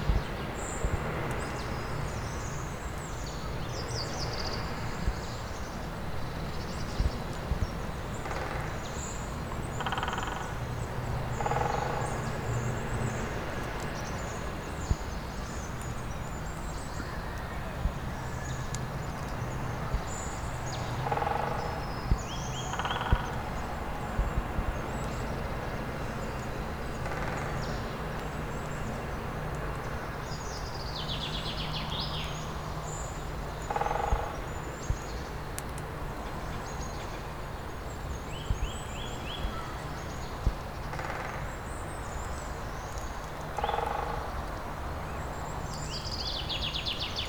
Lautertal, Germany - Duelling Woodpeckers
While walking to the area where I planned to record I heard three or four woodpeckers calling to each other. Luckily I had my Olympus LS-10 recorder in my hand and recorded them using the built in mics on the recorder.
2 March, 09:30